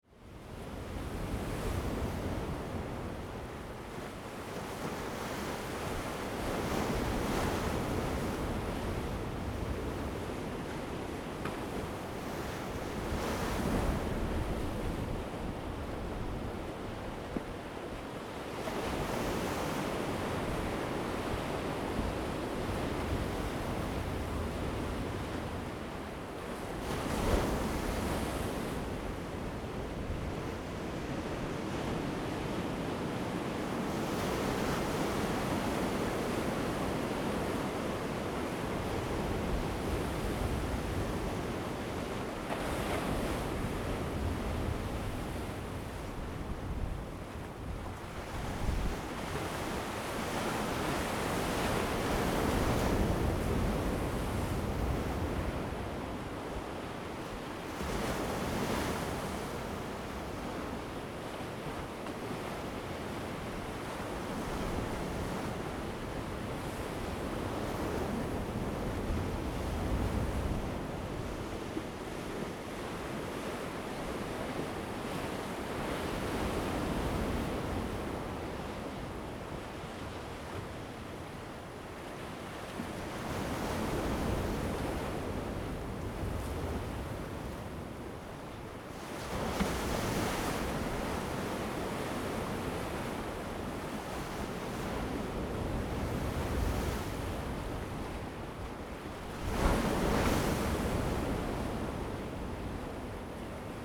和平里, Chenggong Township - In the wind Dibian
In the wind Dibian, Sound of the waves, Very hot weather
Zoom H2n MS+ XY